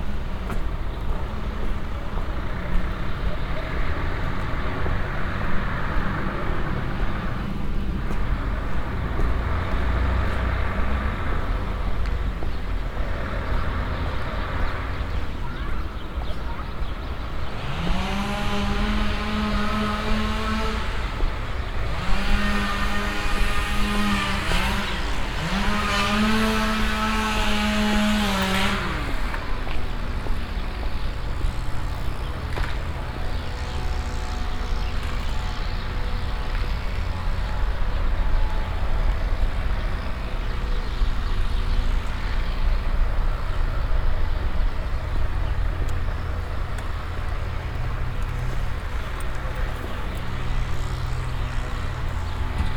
berlin, frobenstr, tree cut and street prostitution
prostitutes on the street speaking to passengers, the sound of a machine saw cutting trees
soundmap d: social ambiences/ listen to the people - in & outdoor nearfield recordings
frobenstrasse